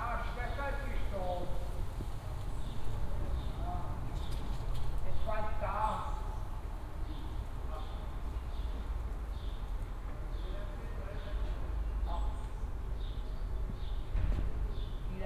(binaural) quiet Sunday morning at the balcony at Nogatstraße. an aimlessly wandering man explaining something to a family who are packing their car for vacation trip.
Berlin, Germany, 31 May